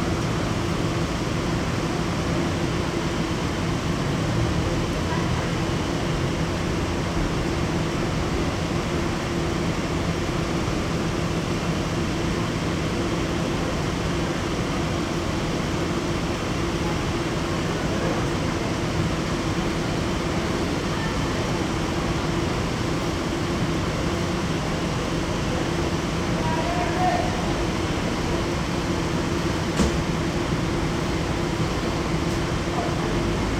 Ipoh bus terminal
(zoom h2, build in mic)
Perak, Malaysia, 22 February, ~11:00